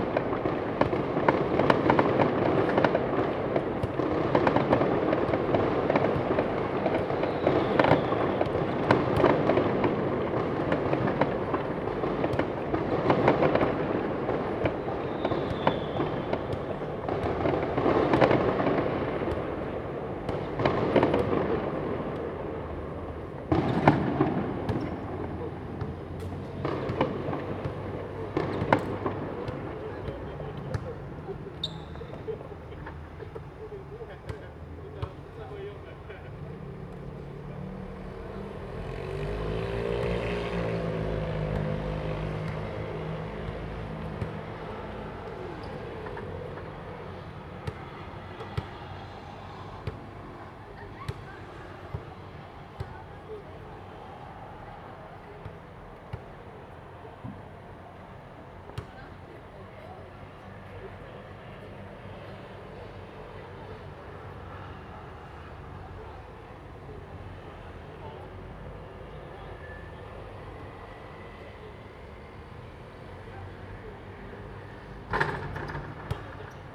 Rende 2nd Rd., Bade Dist. - Firecrackers and fireworks
Firecrackers and fireworks, basketball, Traffic sound, lunar New Year
Zoom H2n MS+XY
Bade District, Taoyuan City, Taiwan, 18 February 2018, 20:30